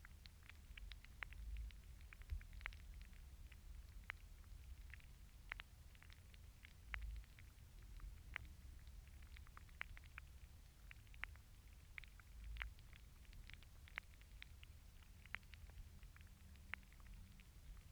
Hydrophone recording of a single stem plant growing in the water.